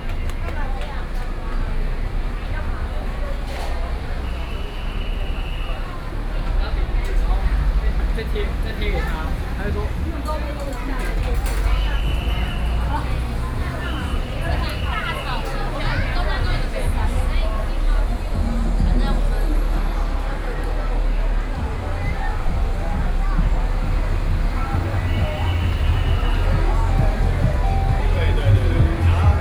Xingdong Rd., Luodong Township - walking on the Road
walking on the Road, Traffic Sound, Various shops voices, Walking towards the park direction